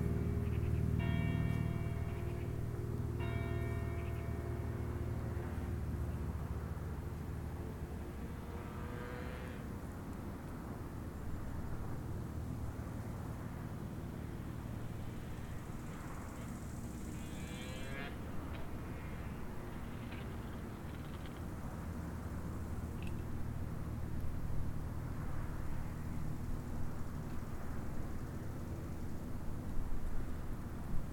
Période de sécheresse l'herbe rase est comme du foin il reste quelques criquets, les bruits de la vallée en arrière plan, le clocher de Chindrieux sonne 18h passage d'un avion de tourisme.